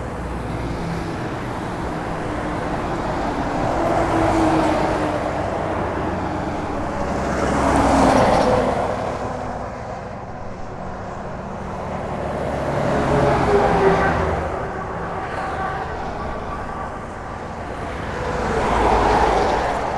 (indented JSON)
{
  "title": "Beograd, Serbia - ambiance from the access road to freeway near belgrade",
  "date": "2019-07-18 15:42:00",
  "description": "klaxon 00:01:48,00000000\ngrincements remorques travaux ? 00:01:56,00000000\ninstant calme 00:04:38,44379167\npassage engin de chantier 00:06:20,00000000",
  "latitude": "44.84",
  "longitude": "20.25",
  "altitude": "84",
  "timezone": "Europe/Belgrade"
}